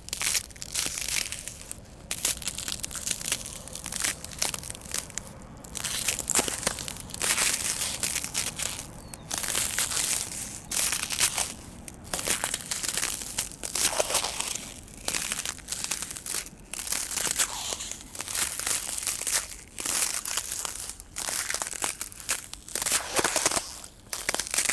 Vernou-la-Celle-sur-Seine, France - Frozen bridge
Walking on ice, on a completely frozen bridge above the Seine river.
December 2016